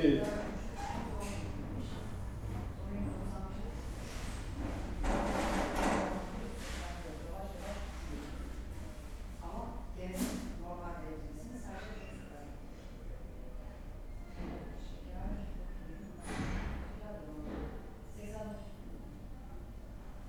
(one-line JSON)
{"title": "berlin, friedelstraße: arztpraxis - the city, the country & me: doctor's office", "date": "2011-04-20 11:43:00", "description": "almost empty waiting room of a doctor's office, receptionists talking\nthe city, the country & me: april 20, 2011", "latitude": "52.49", "longitude": "13.43", "altitude": "45", "timezone": "Europe/Berlin"}